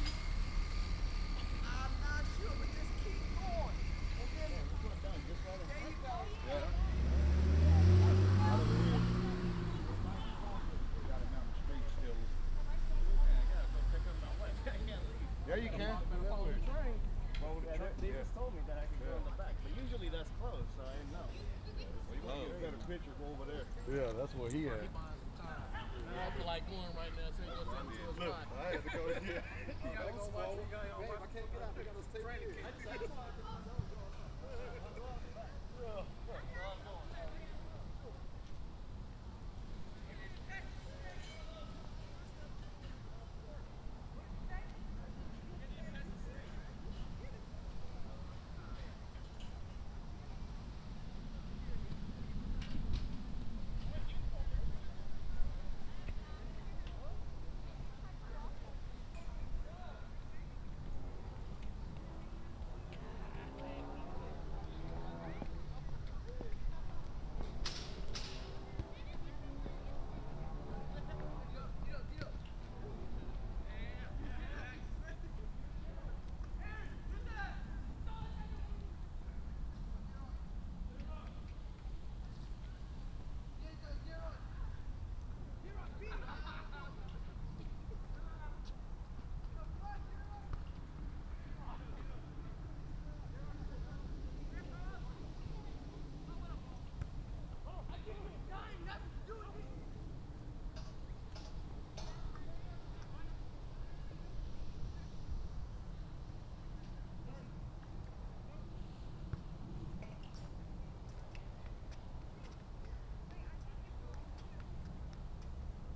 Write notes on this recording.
There was a high speed chase that ended when the cops pushed the robber's car off the road. The cops had shot and killed the criminal when he came at them with a machete. This was about an hour or two after those events had played out. The crime scene was at the entrance of the apartments, but they had it blocked off all the way up to almost the security booth.